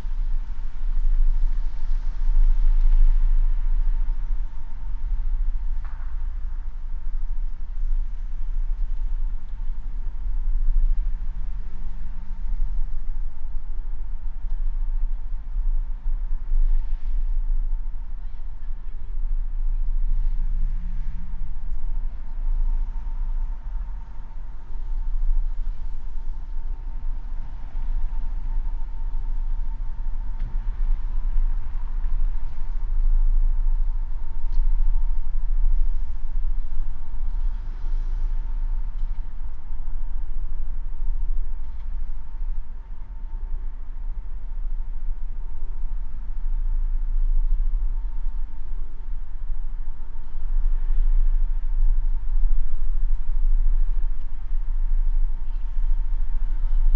{"title": "Zarasai, Lithuania, atmosphere", "date": "2020-02-29 14:50:00", "description": "Central place of provincial town. Two omnis and geophone cathcing lows...", "latitude": "55.73", "longitude": "26.25", "altitude": "153", "timezone": "Europe/Vilnius"}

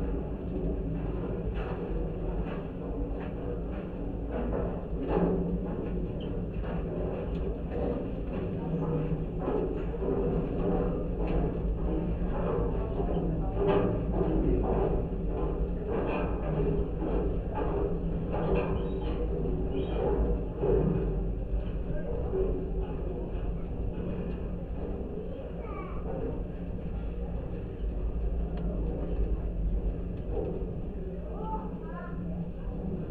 {"title": "Kirkilai, Lithuania, observation tower", "date": "2015-08-23 15:20:00", "description": "contact microphones placed on metallic constructions of 32 meters high observation tower. passangers' feets and wind.", "latitude": "56.25", "longitude": "24.69", "altitude": "46", "timezone": "Europe/Vilnius"}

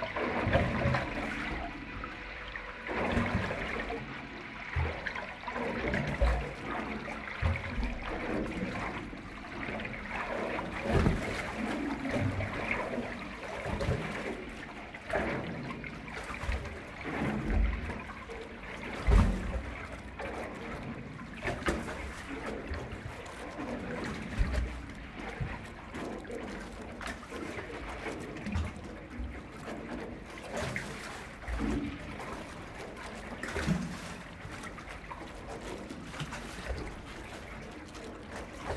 {"title": "Parallel sonic worlds: crossfading from above to below water and back, Blackfriars Pier, White Lion Hill, London, UK - Parallel sonic worlds: crossfading from above to below water and back", "date": "2022-05-16 12:15:00", "description": "Standing on the river bank watching the boats pass the sound they make underwater is inaudible. However, it is loud and often strident. This recording uses a hydrophone and normal microphones. The track starts above water and slowly crossfades below the surface. Water slopping against the pier is heard from both, albeit differently, but the sound of the boats only underwater. Coots call at the beginning.", "latitude": "51.51", "longitude": "-0.10", "altitude": "14", "timezone": "Europe/London"}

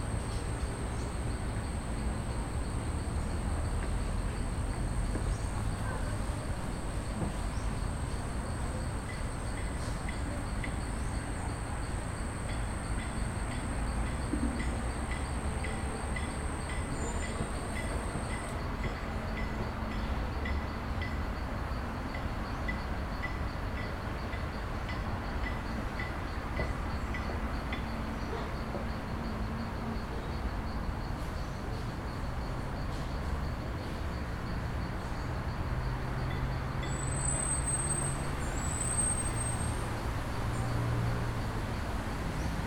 {"title": "Capibaribe River - Baobá - Baobá", "date": "2012-06-06 14:46:00", "description": "In front of the Capiberive River. Zoom H4n.", "latitude": "-8.04", "longitude": "-34.90", "altitude": "12", "timezone": "America/Recife"}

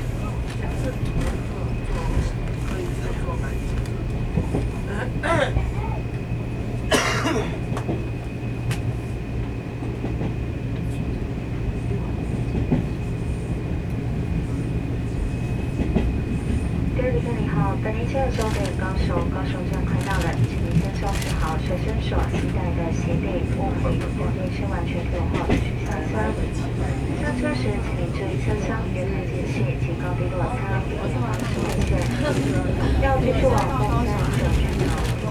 {"title": "Sanmin, Kaohsiung - On the train", "date": "2012-02-01 11:52:00", "latitude": "22.64", "longitude": "120.29", "altitude": "3", "timezone": "Asia/Taipei"}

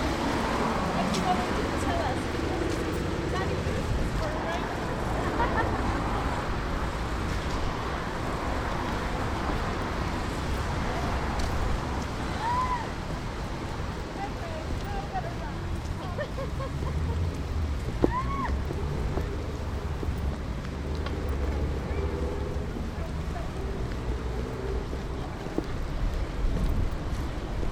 {"title": "walking back to car park in the rain", "date": "2011-08-07 13:37:00", "description": "southampton city centre", "latitude": "50.91", "longitude": "-1.41", "altitude": "20", "timezone": "Europe/London"}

